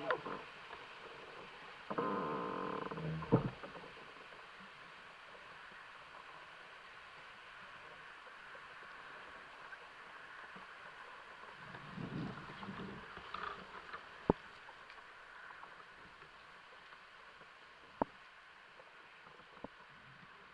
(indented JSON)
{
  "title": "Bracknell Art Centre - Creaking Tree",
  "date": "2009-02-21 00:44:00",
  "latitude": "51.39",
  "longitude": "-0.75",
  "altitude": "79",
  "timezone": "GMT+1"
}